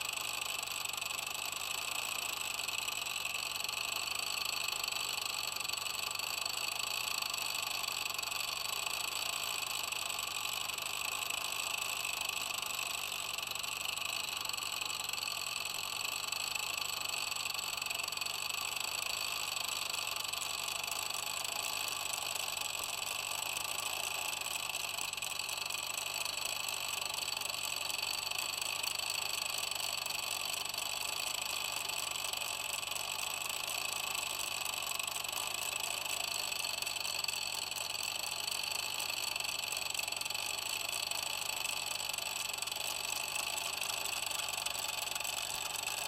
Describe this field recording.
inside my former studio - recording of 2 egg clocks, soundmap nrw: social ambiences/ listen to the people in & outdoor topographic field recordings